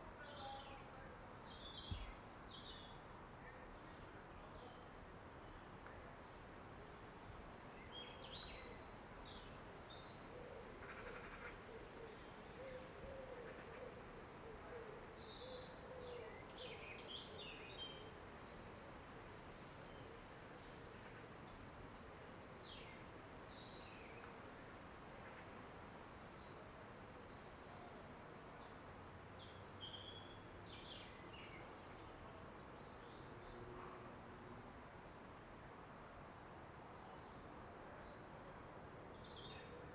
Rue Brûlée, Goussainville, France - Eglise de Goussainville, cloches-Covid19-YC
Au vieux village de Goussainville, pendant le Covid19 le trafic aerien presque a l arret, ambiance pres du cimetiere et cloches de l 'eglise. Une rare ambiance de Goussainville sans avion ..
France métropolitaine, France